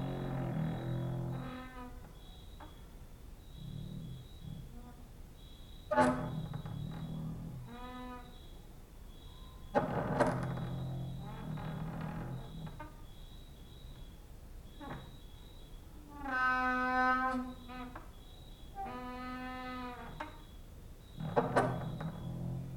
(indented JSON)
{
  "title": "Mladinska, Maribor, Slovenia - late night creaky lullaby for cricket/15/part 1",
  "date": "2012-08-24 21:25:00",
  "description": "cricket outside, exercising creaking with wooden doors inside",
  "latitude": "46.56",
  "longitude": "15.65",
  "altitude": "285",
  "timezone": "Europe/Ljubljana"
}